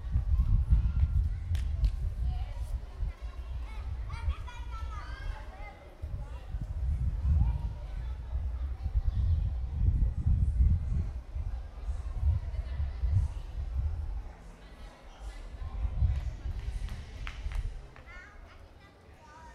Centro, Feira de Santana - BA, Brasil - Centro de Cultura e Arte - CUCA
Centro de artes da UEFS
- Centro, Feira de Santana - Bahia, Brazil, 11 April 2014